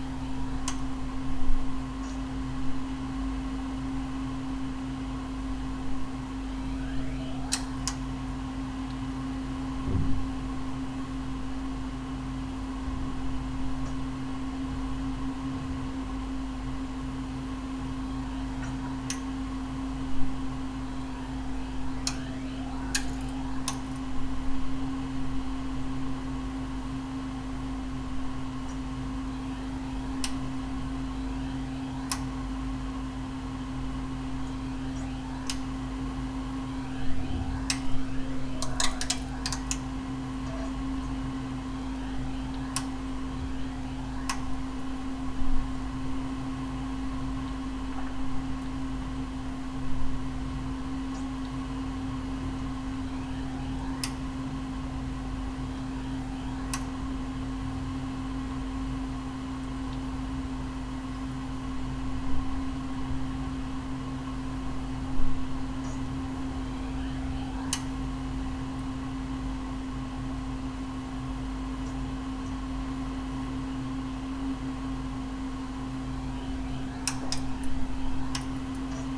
Poznan, living room - radiator language when set to 4
radiator makes a whole array of sounds - a high pitched whine, modulated swoosh, squirts and cracks + you get to hear evening rumble form other apartments.